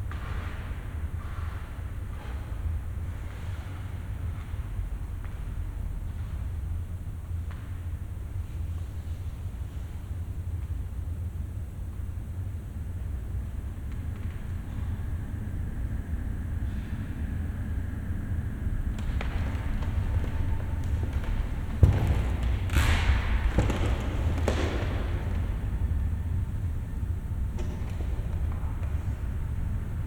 Colloredo-Mansfeld Palace Praha, Česká republika - In the Dancing Hall
The dance hall of the half-forgotten Baroque palace near Charles Bridge. It was built around 1735 for the Prince Vinzenz Paul Mansfeld. Sculptures on the portal and a fountain with a statue of Neptune in the courtyard were most likely made in Matiáš Braun’s workshop. In mid-19th century a neighbouring house was attached to the Palace and a passage was created on the right side of the main façade.